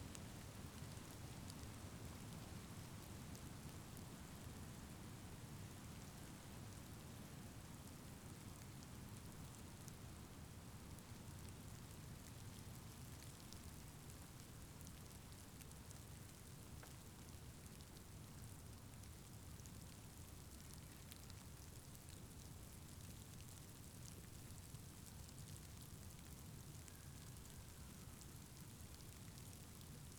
Willow River State Park Boat Launch Parking Lot
Recorded on a snowy March day in the parking lot of the boat launch at Willow River State Park. Wet snow flakes can be heard falling on the ground
Recorded using Zoom h5